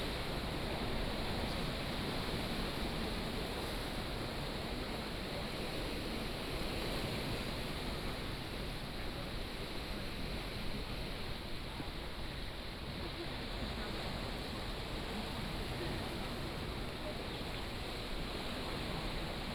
{"title": "橋仔漁村, Beigan Township - Small port", "date": "2014-10-13 17:07:00", "description": "Small port, Sound of the waves, tourists", "latitude": "26.24", "longitude": "119.99", "altitude": "14", "timezone": "Asia/Shanghai"}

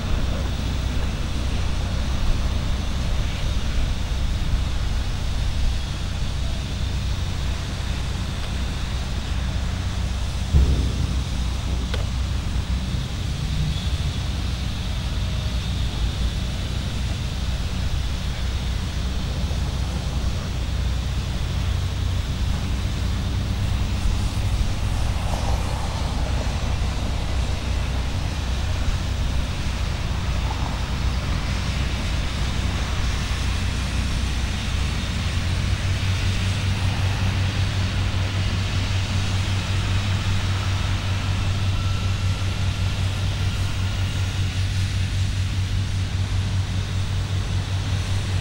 {"title": "EC-1 blaszany płot", "date": "2011-11-17 17:56:00", "description": "nagranie zza blaszanego płotu", "latitude": "51.77", "longitude": "19.47", "altitude": "213", "timezone": "Europe/Warsaw"}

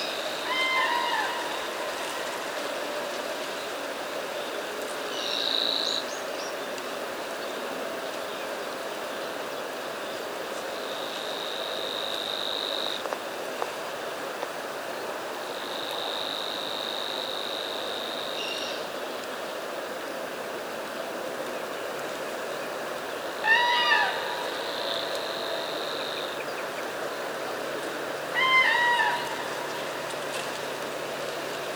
{"title": "Sudeikių sen., Lithuania - Grus grus", "date": "2013-04-29 15:25:00", "latitude": "55.53", "longitude": "25.60", "altitude": "101", "timezone": "Europe/Vilnius"}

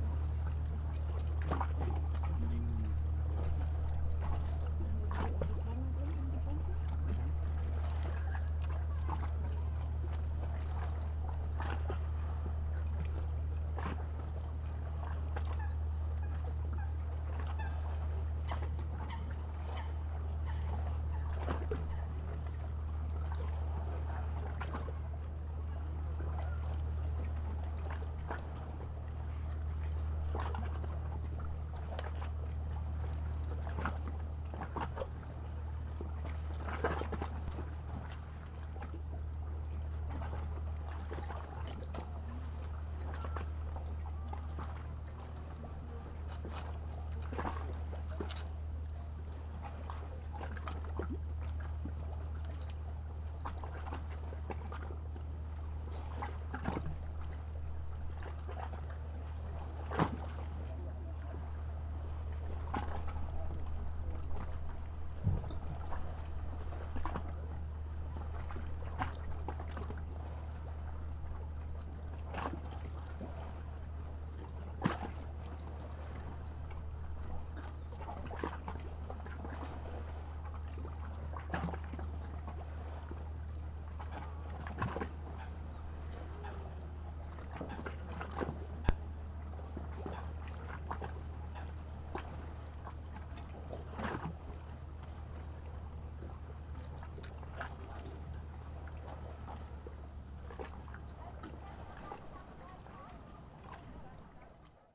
{"title": "Müggelsee, Köpenick, Berlin, Deutschland - tour boat departing", "date": "2016-09-25 15:00:00", "description": "a tour boat departs, decending drone\n(Sony PCM D50)", "latitude": "52.43", "longitude": "13.64", "altitude": "30", "timezone": "Europe/Berlin"}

